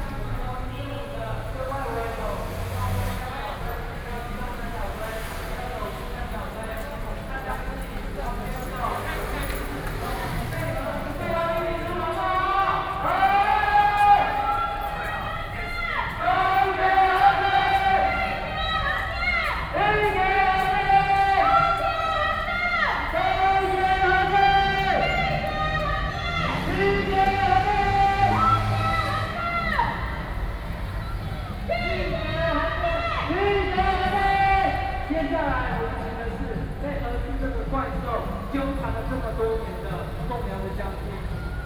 Wuchang St., Taipei City - Anti-nuclear movement
No NUKE, Binaural recordings